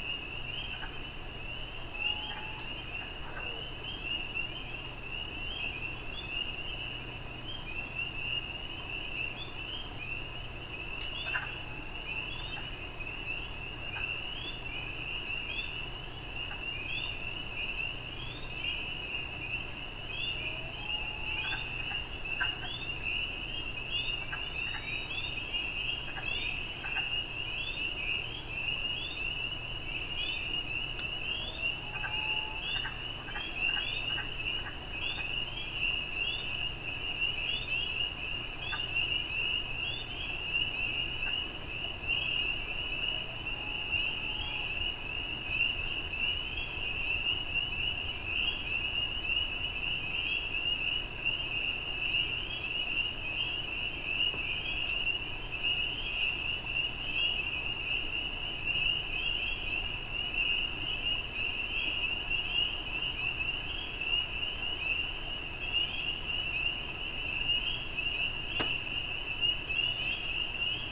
{"title": "Warbler's Roost, Ontario, Canada - Spring Peepers, Tree Frogs and Loons", "date": "2020-05-02 03:30:00", "description": "Sring Peepers, Tree Frogs and Loons recorded at 3:30 am on balcony of the inn at Warbler's Roost. Sounds recorded approximately 500 feet from Commanda Creak and 1000 feet from Deer Lake. Sound recording made during the Reveil broadcast of dawn chorus soundscapes for 2020. Recorded with pair of DPA 4060 microphones in a boundary configuration.", "latitude": "45.82", "longitude": "-79.58", "altitude": "337", "timezone": "America/Toronto"}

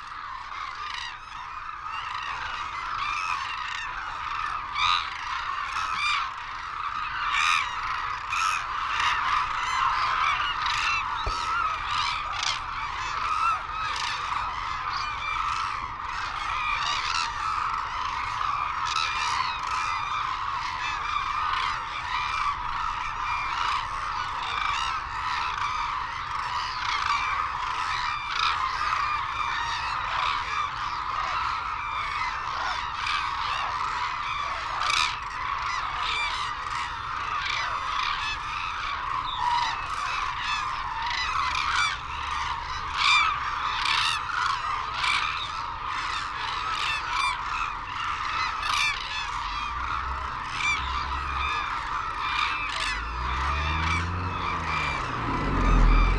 {"title": "Sho, Izumi, Kagoshima Prefecture, Japan - Crane soundscape ...", "date": "2008-02-18 09:15:00", "description": "Arasaki Crane Centre ... Izumi ... calls and flight calls from white naped cranes and hooded cranes ... cold windy sunny ... background noise ... Telinga ProDAT 5 to Sony Minidisk ... wheezing whistles from youngsters ...", "latitude": "32.10", "longitude": "130.27", "altitude": "3", "timezone": "Asia/Tokyo"}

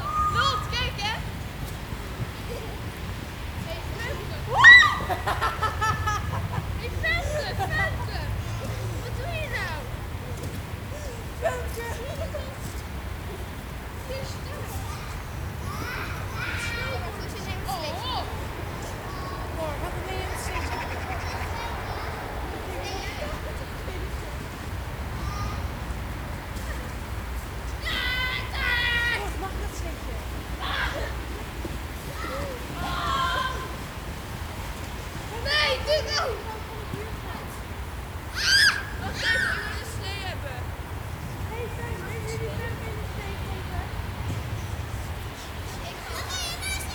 {"title": "Van Stolkpark en Scheveningse Bosjes, Den Haag, Nederland - Kids playing in the snow", "date": "2010-12-22 13:29:00", "description": "Kids playing in the snow, mainly sledding.\nKinderen spelen in de sneeuw, voornamelijk met sleeën.\nInternal mics Zoom H2", "latitude": "52.10", "longitude": "4.29", "altitude": "11", "timezone": "Europe/Amsterdam"}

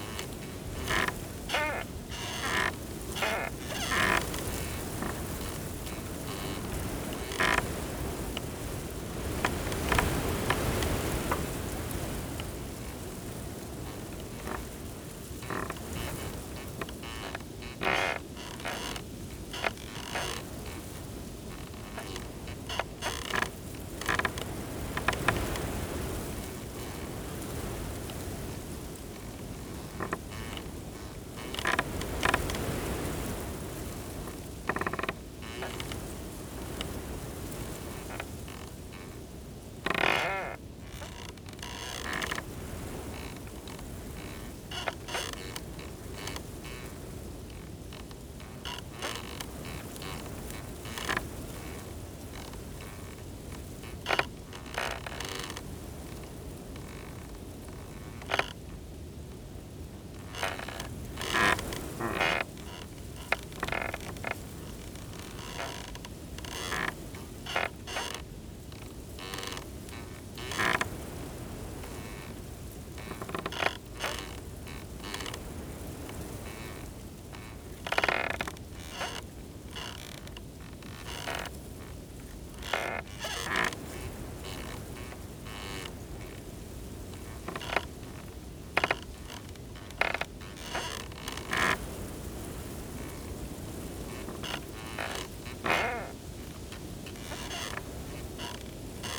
{"title": "Wooden gate creaks, strong winds, Westwood Marsh, Halesworth, UK - Creaking wooden gate in strong wind, beautiful sunset", "date": "2022-01-29 16:11:00", "description": "Westwood marsh is a very special and atmospheric place with unique wildlife. It is one of the largest reedbeds in the UK surrounded by woodland and heath beside the North Sea and now a Suffolk Wildlife Trust/RSPB nature reserve. I have been coming here for more than 60 years and am always amazed at how unchanged it seems in all that time. Today is a beautiful winter's day with intense bright sun and blue sky. The strong gusty wind hisses through the reeds and rocks trees and people. The old, lichen covered, wooden gates creak and groan. It feels timeless as the evening sun sets below the distant tree line.", "latitude": "52.30", "longitude": "1.64", "altitude": "1", "timezone": "Europe/London"}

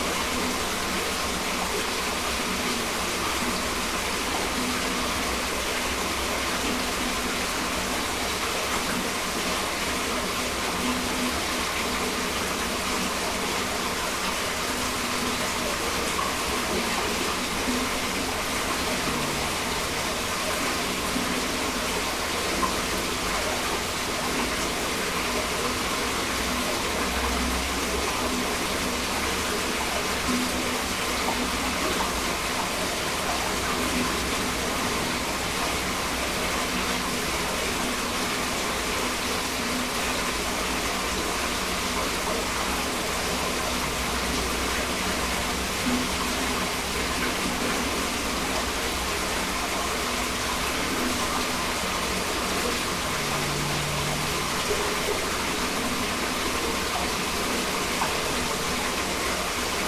{"title": "Birštonas, Lithuania, hidden well", "date": "2022-06-19 17:40:00", "description": "Some kind of hidden, closed well in the park near mound. Small omni mics inside.", "latitude": "54.60", "longitude": "24.03", "altitude": "64", "timezone": "Europe/Vilnius"}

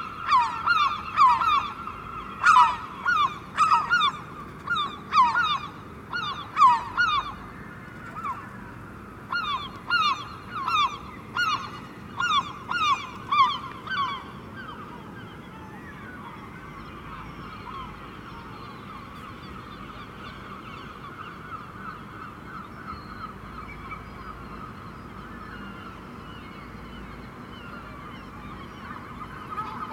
{"title": "Arcole Brindeau, Le Havre, France - The gulls", "date": "2016-07-20 21:00:00", "description": "We are staying here since a few time. Some gulls are very angry because the trash is not accessible. Birds complain.", "latitude": "49.49", "longitude": "0.14", "altitude": "6", "timezone": "Europe/Paris"}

